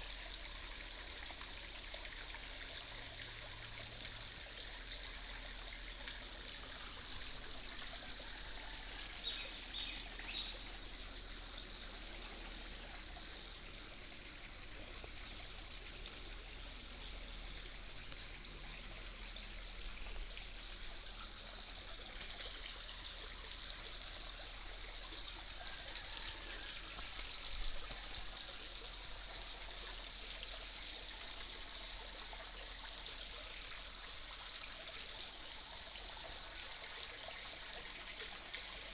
中路坑溪, 埔里鎮桃米里 - The sound of water streams
The sound of water streams, Chicken sounds
Puli Township, Nantou County, Taiwan, April 2015